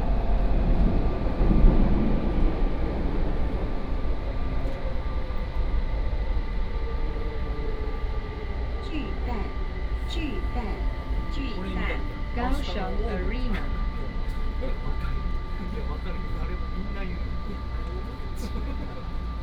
左營區, Kaohsiung City - KMRT
Kaohsiung Mass Rapid Transit, from Zuoying station to Kaohsiung Arena, Traffic Sound
Binaural recordings